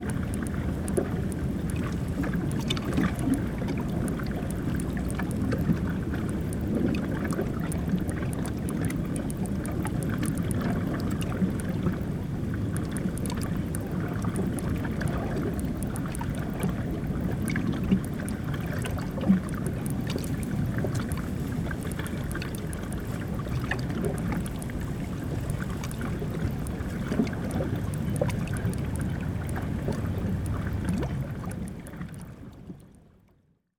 Vinišće, Kroatien - Vinišće - quay wall
Vinišće - quay wall. [I used an MD recorder with binaural microphones Soundman OKM II AVPOP A3]
Vinišće, Croatia, 16 August 2008